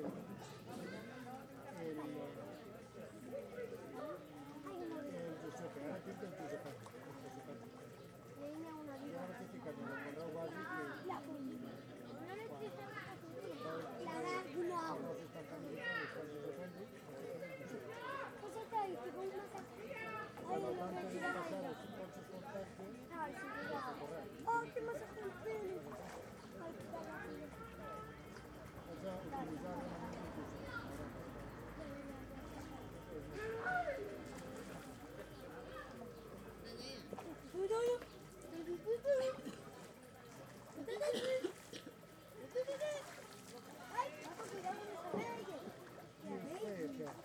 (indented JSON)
{
  "title": "Carrer Sta. Margalida, Tárbena, Alicante, Espagne - Tàrbena - Espagne - Piscine Municipale Ambiance",
  "date": "2022-07-16 14:00:00",
  "description": "Tàrbena - Province d'allicante - Espagne\nPiscine Municipale\nAmbiance\nZOO F3 + AKG C451B",
  "latitude": "38.70",
  "longitude": "-0.10",
  "altitude": "544",
  "timezone": "Europe/Madrid"
}